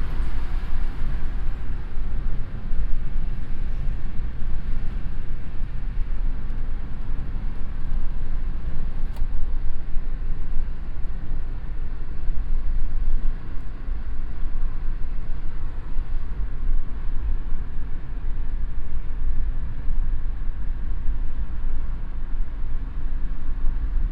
innere kanalstrasse stadtauswärts - nach köln nord - anfahrt und fahrt über zoobrücke- nachmittags - parallel stadtauswärts fahrende fahrzeuge - streckenaufnahme teil 05
soundmap nrw: social ambiences/ listen to the people - in & outdoor nearfield recordings